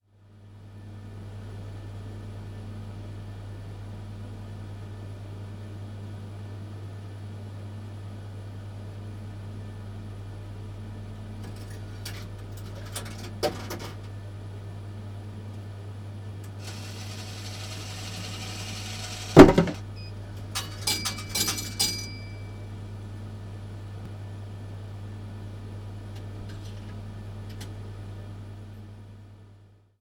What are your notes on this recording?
Mikrofon innen / microphone inside, (Zoom H2, builtin mics, 120°)